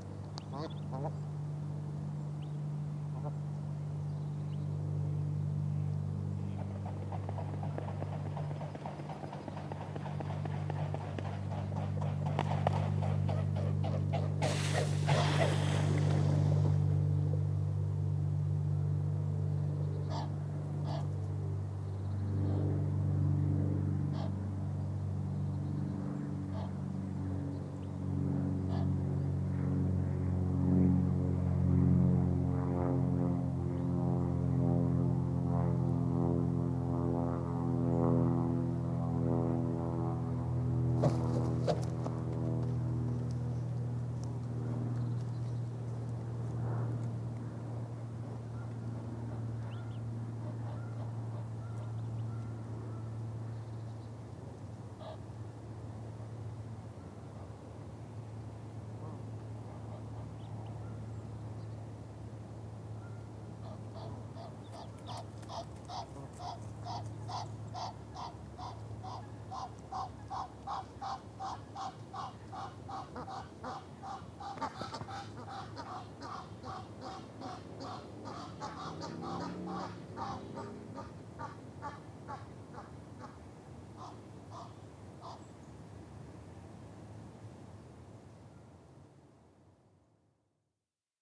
{"title": "Whiteknights Lake, University of Reading, Reading, UK - Egyptian geese", "date": "2017-04-12 16:43:00", "description": "This is the sound of two Egyptian geese. One of them was standing on top of the other one, then they started honking in chorus which drew the attention of a very angsty male swan who immediately flew over to check on the source of the noise.", "latitude": "51.44", "longitude": "-0.94", "altitude": "61", "timezone": "Europe/London"}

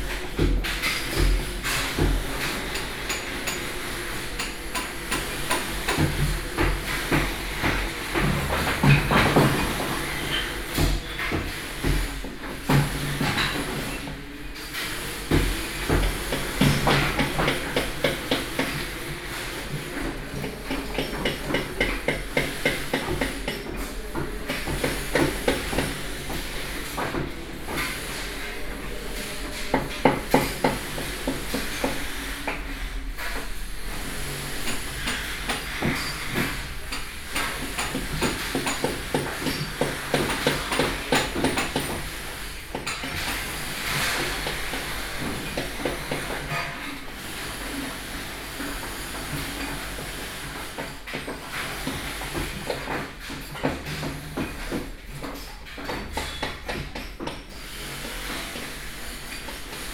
{"title": "Beitou, Taipei - Being renovated house", "date": "2012-10-04 11:25:00", "description": "Being renovated house, Binaural recordings+Zoom H4n +Contact Mic.", "latitude": "25.14", "longitude": "121.49", "altitude": "23", "timezone": "Asia/Taipei"}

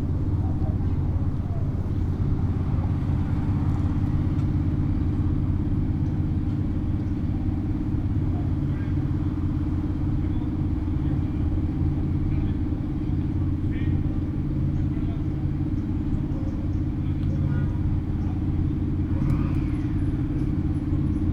{"title": "Panorama Park, Av. Panorama, Valle del Campestre, León, Gto., Mexico - Por el parque de panorama.", "date": "2020-11-30 14:43:00", "description": "Around the panorama park.\nI made this recording on November 30th, 2020, at 2:43 p.m.\nI used a Tascam DR-05X with its built-in microphones and a Tascam WS-11 windshield.\nOriginal Recording:\nType: Stereo\nEsta grabación la hice el 30 de noviembre de 2020 a las 14:43 horas.", "latitude": "21.15", "longitude": "-101.69", "altitude": "1823", "timezone": "America/Mexico_City"}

